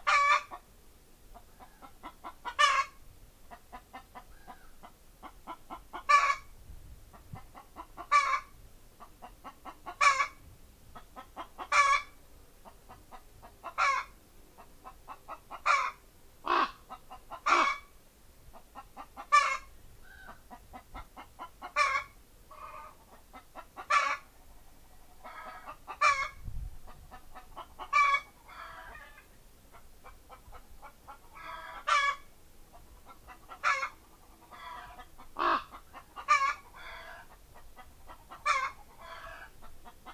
Symondsbury, UK - Chicken and Crow Chorus
Chicken and Crow Chorus, Quarr Lane, Symondsbury
Walking up one of West Dorset's iconic sunken lanes, we were suddenly assailed by a curious chorus of birds